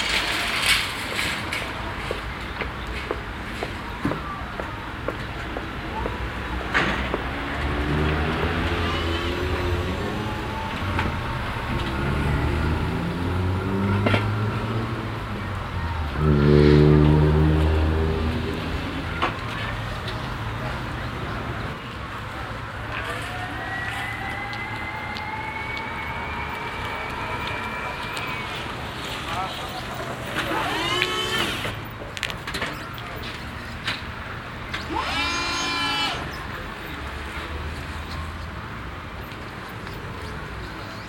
abladen von beladenen paletten mit elektrischem hubwagen und gabelstabler von einem lkw, mittags
soundmap nrw:
social ambiences, topographic fieldrecordings